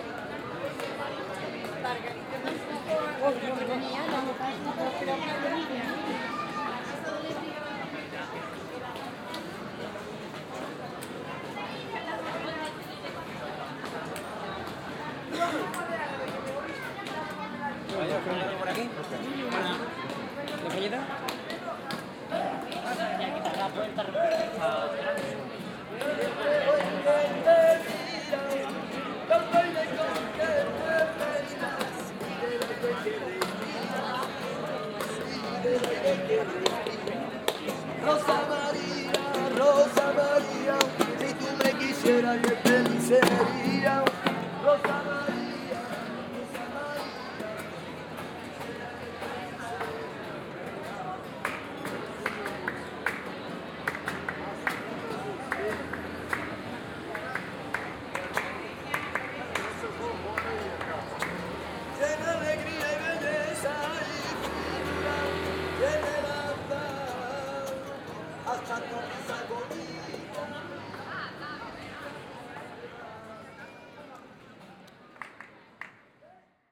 Sevilla, Provinz Sevilla, Spanien - Sevilla - Plaza de San Andres - street bars
At the Plaza de SAn Andres in the evening. The sound of people sitting outside at the street bars talking - a singer and a guitar player.
international city sounds - topographic field recordings and social ambiences